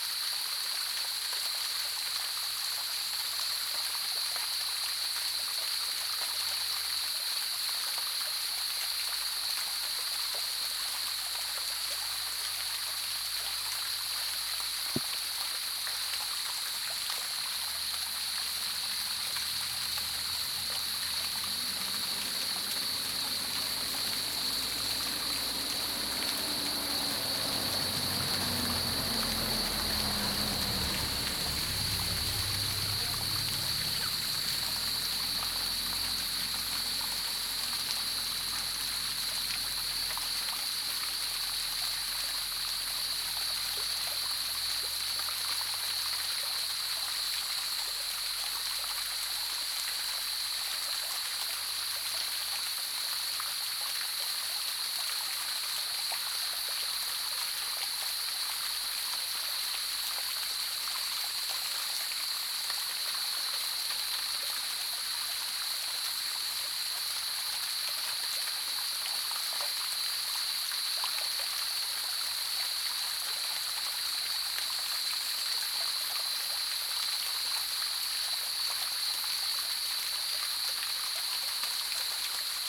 華龍巷, Yuchi Township, Nantou County - Cicadas and Flow sound
Small streams, Cicadas called, Flow sound, Birds called
Zoom H2n Saprial audio
Yuchi Township, 華龍巷43號, 14 July 2016